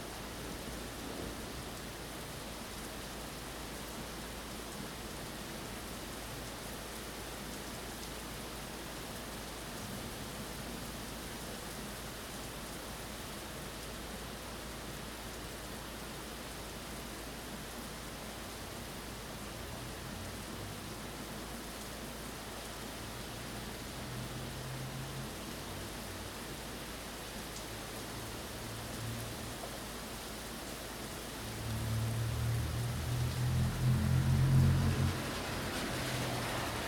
Parmiter street, London borough of Tower Hamlets, London - Thunder and rain
Thunder in London, recorded with Zoom H1 recorder.